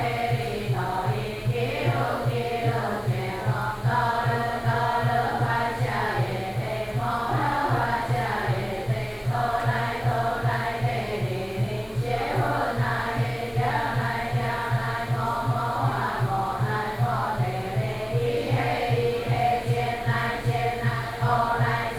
hundreds of old woman are sitting in the temple chanting together, Sony PCM D50 + Soundman OKM II